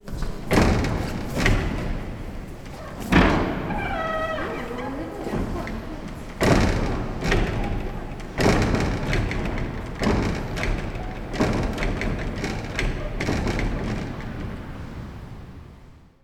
rosario: cathedral, noto - cathedral, noto
Noto Syracuse, Italy, 30 December